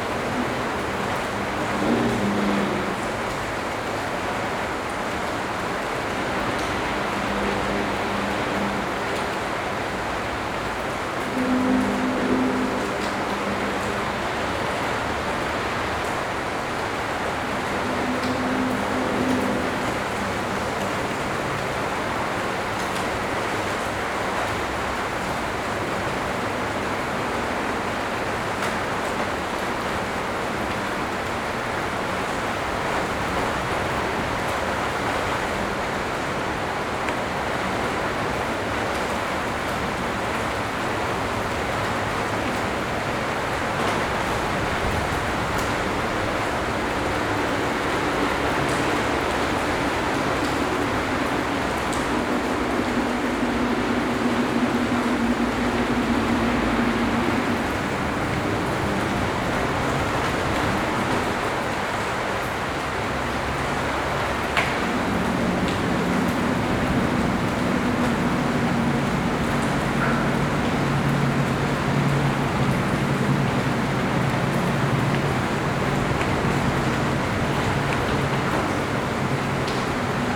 gimnazija, Maribor, Slovenia - raindrops and needles
rain from the 2nd floor of maribor's 2. gimnazija highschool building, with the mics near a row of slightly open floor-to-ceiling windows. in the background can be heard sounds coming from ignaz schick, martin tétrault, and joke lanz's turntable workshop, taking place on the other side of the building.